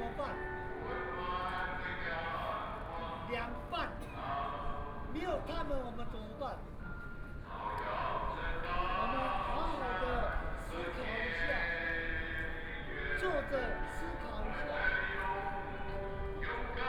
Zhongshan N. Rd., Taipei City - Waiting for a moment before being expelled
Nonviolence, Occupation traffic arteries, Protest against nuclear power, The police are ready to expel the people assembled and Students, Thousands of police surrounded the people, Students sang songs, Waiting for a moment before being expelled
Sony PCM D50+ Soundman OKM II